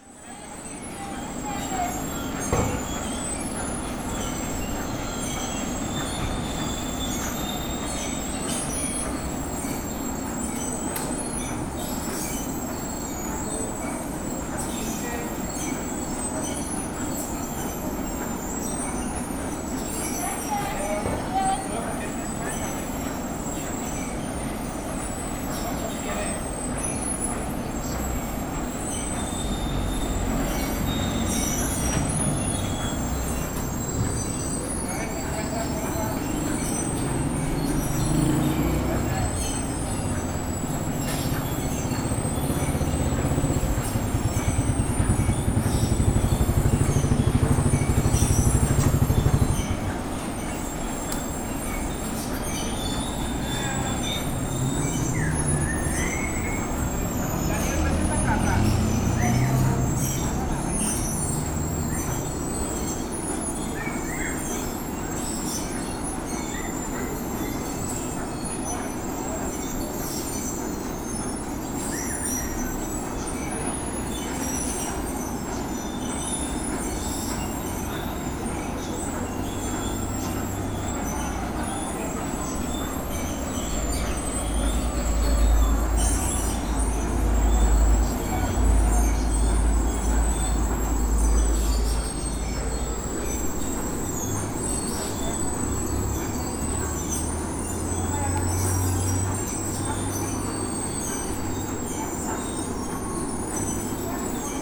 Laguna de Términos, Lomas de la Trinidad, León, Gto., Mexico - In front of the Tortilleria Sagrado Corazon de Jesus in Lomas de la Trinidad.
I made this recording on October 11th, 2021, at 14:22 p.m.
I used a Tascam DR-05X with its built-in microphones and a Tascam WS-11 windshield.
Original Recording:
Type: Stereo
Frente a la Tortillería Sagrado Corazón de Jesús en Lomas de la Trinidad.
Esta grabación la hice el 11 de octubre de 2021 a las 14:22 horas.
Usé un Tascam DR-05X con sus micrófonos incorporados y un parabrisas Tascam WS-11.
Guanajuato, México, 11 October 2021